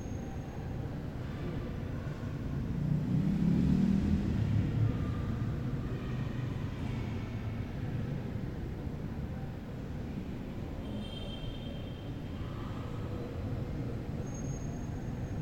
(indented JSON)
{
  "title": "Cl., Medellín, Antioquia, Colombia - Iglesia Santo Cura de Ars",
  "date": "2021-11-09 17:00:00",
  "description": "Iglesia con poca gente\nSonido tónico: Carros y motos pasando, Personas hablando.\nSeñal sonora: Bocina de motos, Sirena de un carro policial, Puerta de un cajón, Pasos.\nSe grabó con el micrófono de un celular.",
  "latitude": "6.23",
  "longitude": "-75.61",
  "altitude": "1551",
  "timezone": "America/Bogota"
}